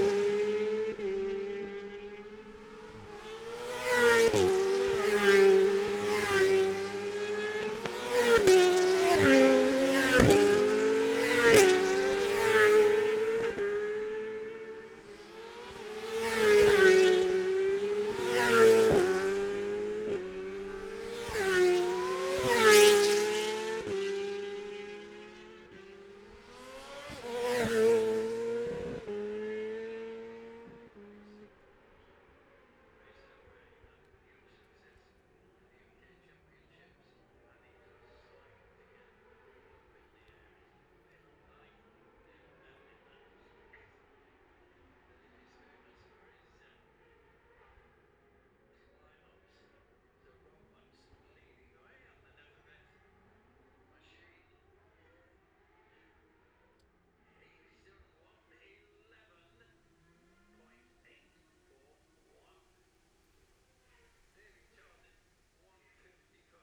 Jacksons Ln, Scarborough, UK - gold cup 2022 ... 600 practice ...
the steve henshaw gold cup 2022 ... 600 group two practice ... dpa 4060s clipped to bag to zoom h5 ...